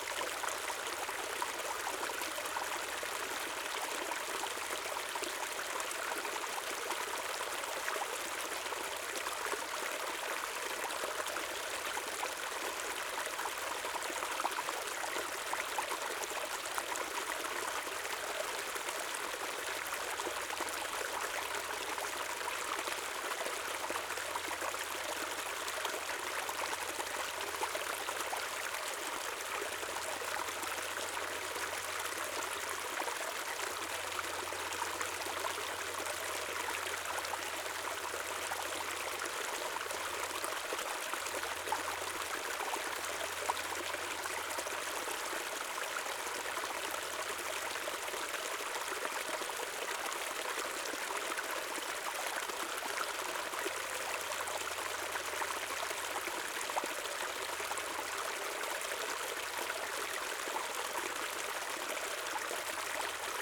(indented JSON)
{
  "title": "Water Stream between H011 &, Hong Kong Trail Section, The Peak, Hong Kong - Water Stream between H011 & H012",
  "date": "2018-12-21 12:30:00",
  "description": "A water stream with a wooden bride located between H011 and H012, running towards Pok Fu Lam Reservoir. You can hear the clear water running sound from the close miked recording.\n位於標距柱H011和H012中間流向薄扶林水塘的石澗，有一座小木橋。你可以聽到近距錄音下清晰的流水聲。\n#Water, #Stream, #Bird, #Plane",
  "latitude": "22.27",
  "longitude": "114.14",
  "altitude": "252",
  "timezone": "Asia/Hong_Kong"
}